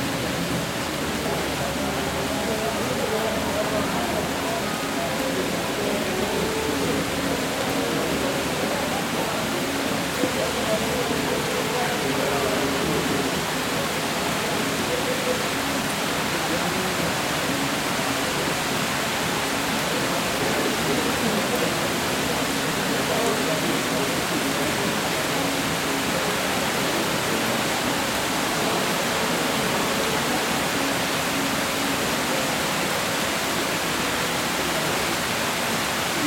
Portería del Rio, Medellín, Aranjuez, Medellín, Antioquia, Colombia - De Fiesta En La Lluvia
Una tarde en el bloque de artes de la universidad de Antioquia mientras llueve y todos los estudiantes conversan pasando el tiempo